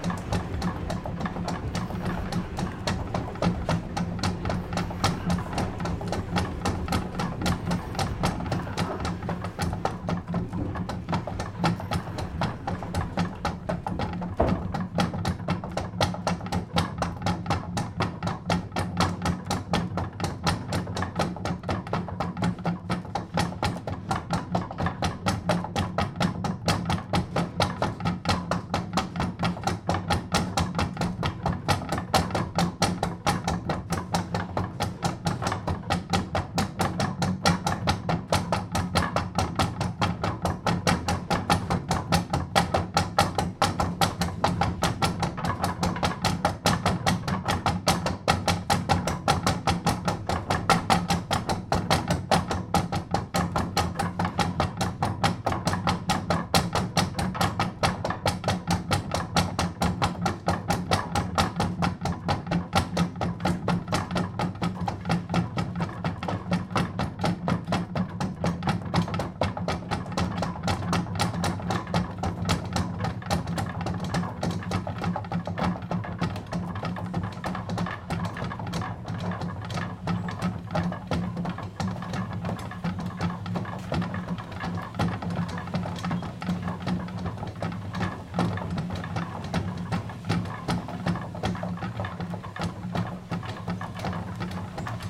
Parc de la Tourelle, Achicourt, France - Moulin d'achicourt
Achicourt (Pas-de-Calais)
Au moulin de la Tourelle, on moud encore la farine "à l'ancienne"
Hauts-de-France, France métropolitaine, France, June 2020